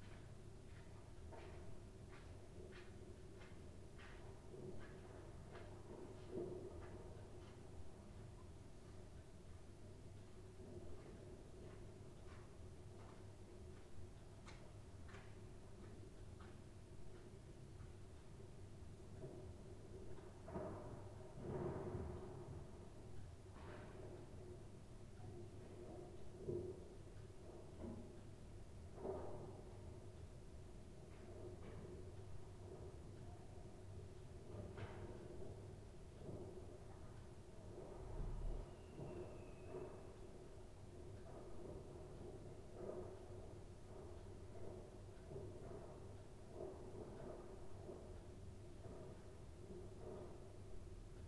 new year's eve afternoon, noise of bangers and other fireworks, someone smashes bottles in the bottle bank, noise of steps in the snow, planes crossing the sky and the noise of the gas heating
the city, the country & me: december 31, 2009